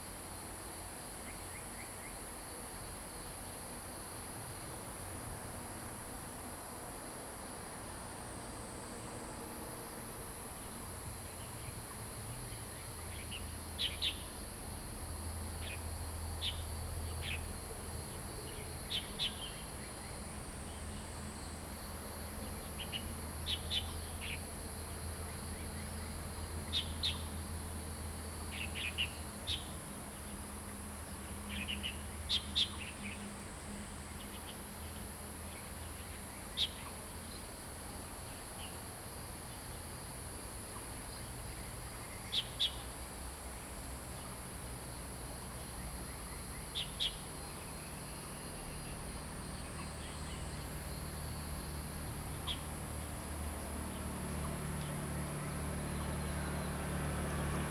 埔里鎮桃米里, Nantou County, Taiwan - Bird calls
Bird calls, Frog chirping, Brook
Zoom H2n MS+ XY